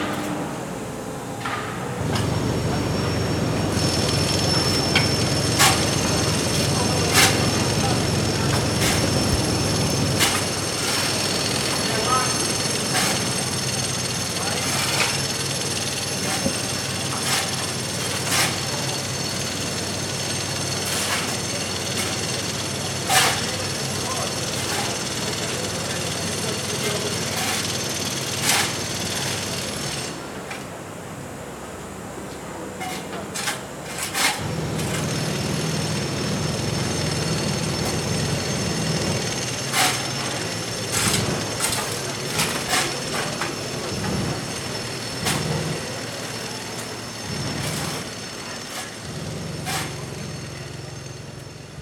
Zagreb, Varsavska street - destruction of a public pedestrian zone for private interest
borers, spades, voices of workers
City of Zagreb, Croatia, 25 July, 18:50